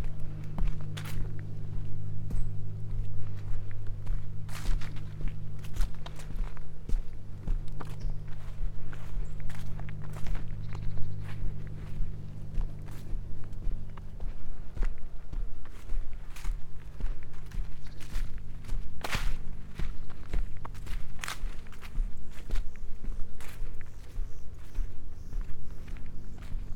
walk with surprise, Šturmovci, Slovenia - moment
walk with surprise - rise of a partridge